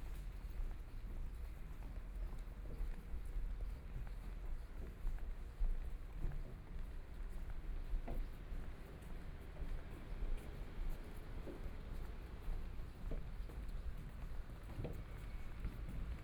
Taitung City, Taiwan - Walking on abandoned railroad tracks
Walking on abandoned railroad tracks, Currently pedestrian trails, Dogs barking, Garbage truck music, Bicycle Sound, People walking, Binaural recordings, Zoom H4n+ Soundman OKM II ( SoundMap2014016 -22)